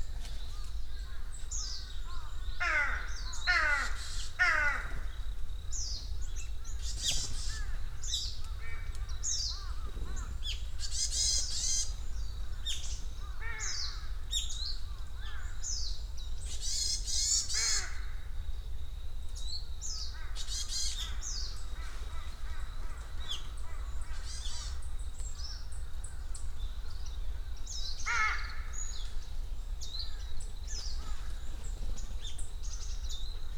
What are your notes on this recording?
사려니숲 Saryeoni Forest is located on the middle slopes of Halla Mountain. Jeju Island is a volcanic artifact, and lava fields are to be easily found. This coverage of special lava geology, as well as the fact that it is an island, gives Jeju a special ecological character. In the mid-ground of this recording are heard the mountain crows...their caws echo among the forest (...there were many trees of a good age and size here as is hard to find in other parts of Korea)...in the foreground the activity of many smaller forest birds...wingbeats...background; the curse of Jeju Island is the inescapable noise of the tourism industry...aircraft, tour buses, etc...